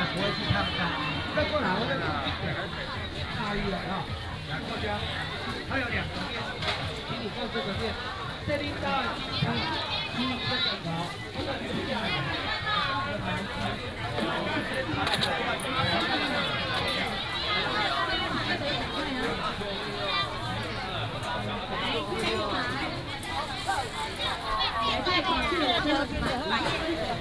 Aiguo St., Douliu City - Sellers selling sound
Walking in the market, Sellers selling sound
Yunlin County, Taiwan, 25 January 2017, 10:44